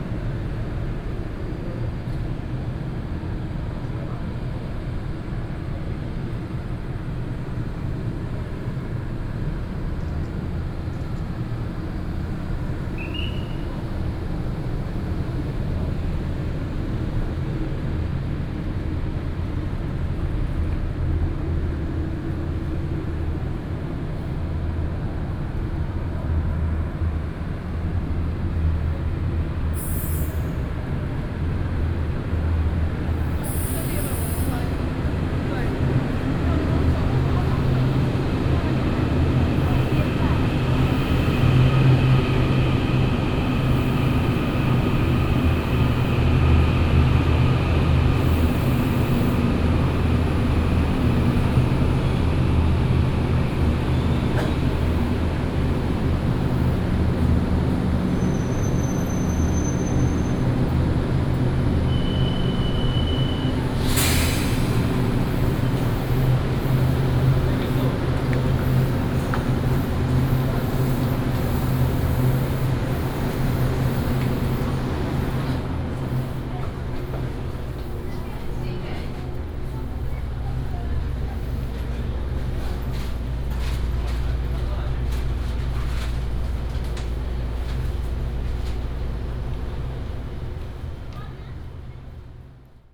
基隆火車站, Ren'ai District, Keelung City - Walking at the station
Walking at the station, From the station hall, Directions to the station platform, Escalator sound